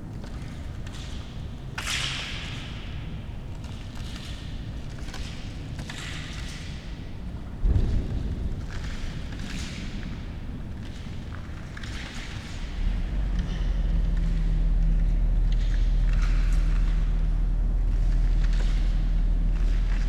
Walking inside a defunct workshop at nighttime. Stepping on various objects and moving them. Cars moving by on the nearby road. Zoom H5 and LOM Uši Pro microphones.
Manner-Suomi, Suomi, 1 August